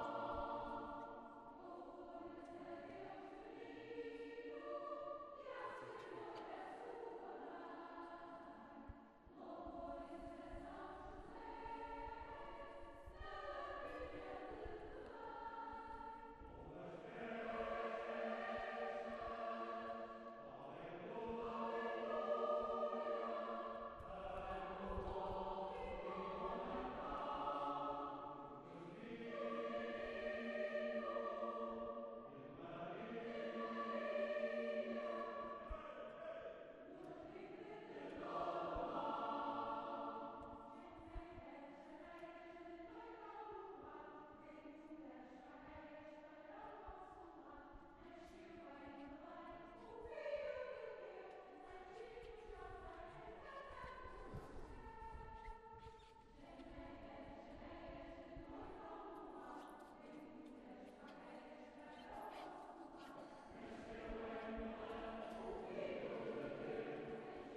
Lisboa, Igreja de Loreto Christmas
Christmas choir concert part 2
Portugal, European Union